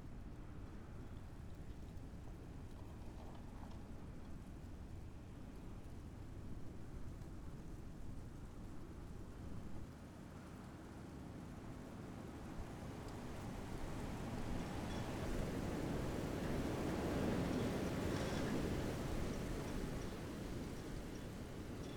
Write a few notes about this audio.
stormy evening, mic in the window, street ambience, rustling leaves, the city, the country & me: january 2, 2015